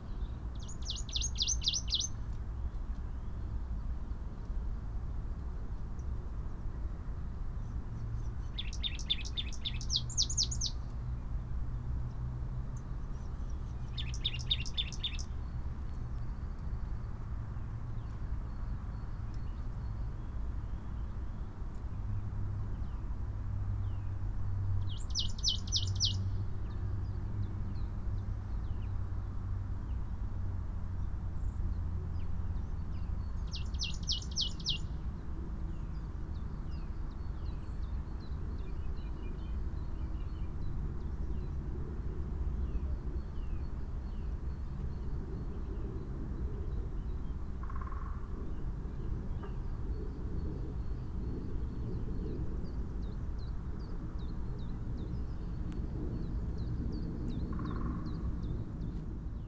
tree crown poems, Piramida - sun eclipse, spring equinox, bird few branches above me
Maribor, Slovenia, 20 March 2015, 10:02